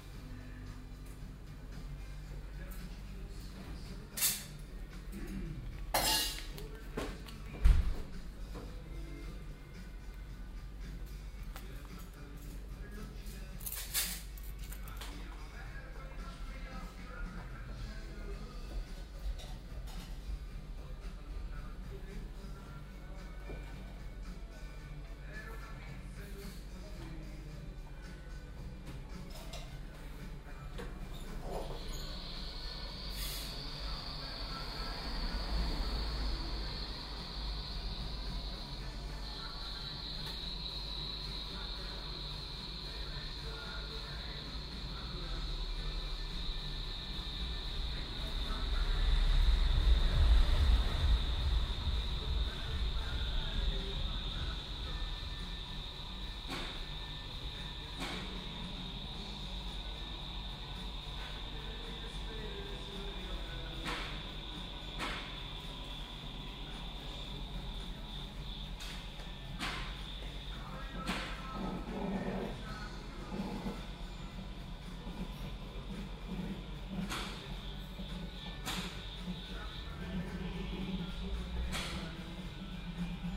cologne, south, severinsstr, capuccino zubereitung
soundmap koeln/ nrw
capuccino zubereitung beim feinkost italiener auf der severinsstr
28 May 2008